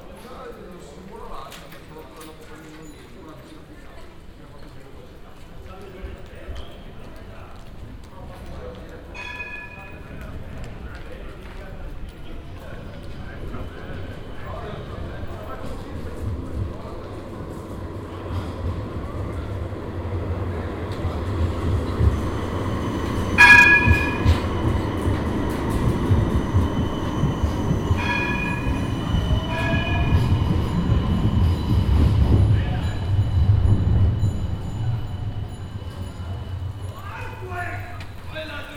amsterdam, leidsestraat, tram electricity wires
the swinging tram electrity wires, trams passing by - tourists biking on rented bicycles
international city scapes - social ambiences and topographic field recordings
Amsterdam, The Netherlands, 6 July, ~17:00